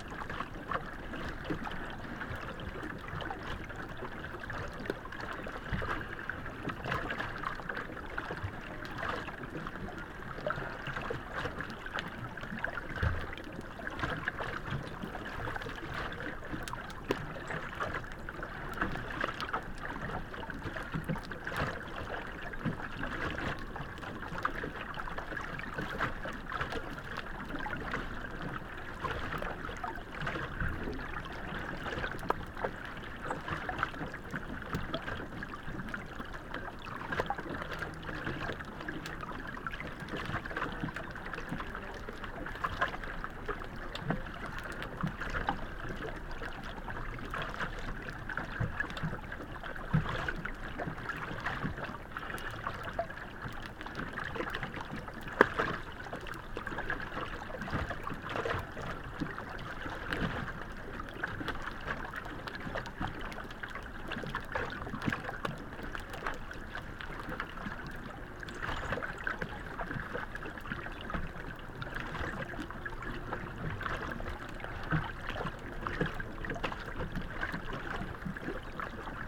Chania, Crete, underwater
another spot for my hydrophone